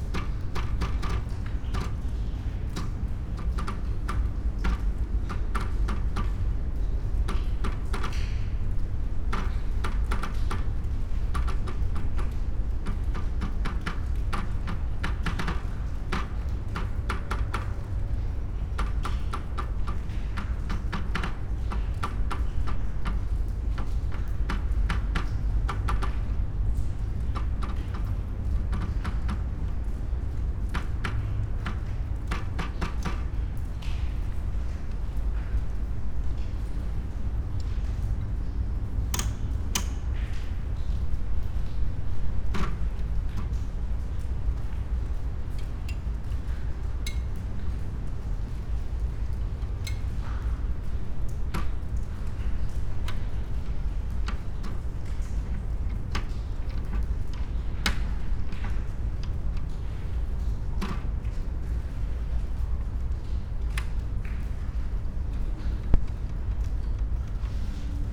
Punto Franco Nord, house, Trieste, Italy - typewriter
sounds of the typewriter, covered with sea salt, rust, lying on the raw stony floor, the only object there, on the ground floor of abandoned house number 25, Trieste old harbor ambience ...